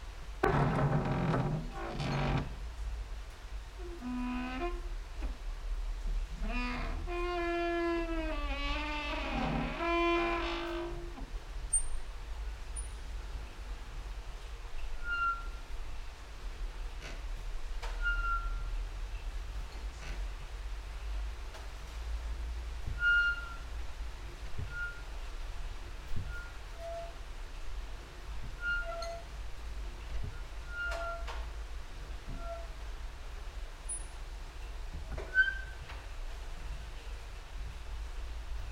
Mladinska, Maribor, Slovenia - late night creaky lullaby for cricket/21
no cricket at that day ... rain and drops outside, exercising creaking with wooden doors inside